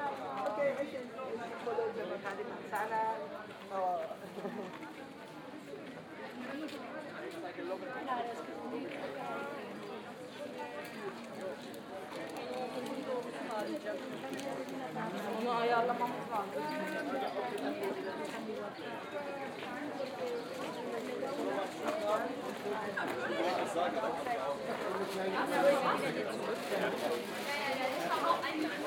Maybachufer, Berlin, Germany - Familiar Voices - 3rd June 2022
Familiar voices at the Neuköllner Wochenmarkt Maybachufer.
Deutschland, 3 June 2022, 12:00